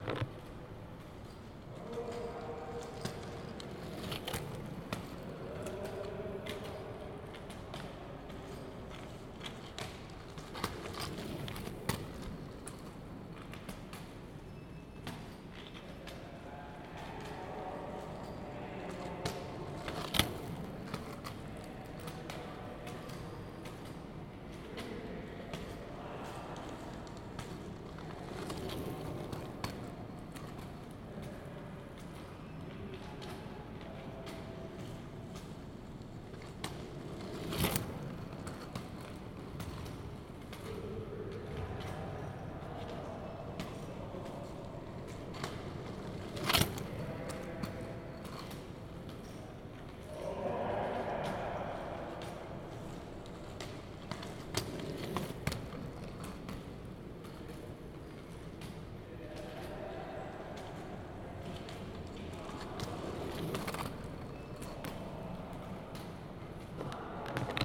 New York University Abu Dhabi, P.o. Box, Sa - Sound of NYUAD Arts Center
This is the sound of the Arts Center on a quiet Sunday afternoon